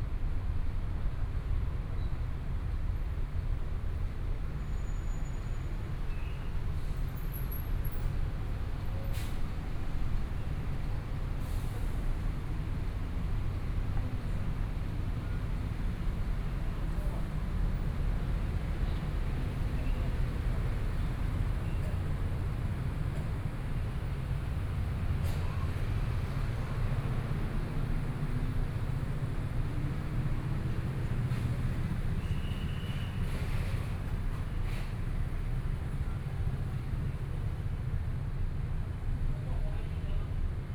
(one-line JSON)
{"title": "Sec., Minquan E. Rd., Zhongshan Dist. - soundwalk", "date": "2014-02-06 18:04:00", "description": "Walking in the underpass, Environmental sounds, Walking on the road, Motorcycle sound, Traffic Sound, Binaural recordings, Zoom H4n+ Soundman OKM II", "latitude": "25.06", "longitude": "121.53", "timezone": "GMT+1"}